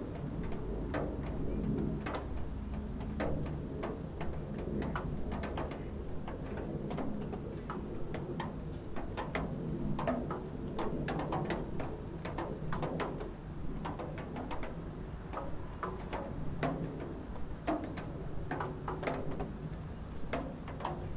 Wolbrom, Polska - Melting snow
Melting snow, handy recorder zoom h4n
Wolbrom, Poland, 13 November 2016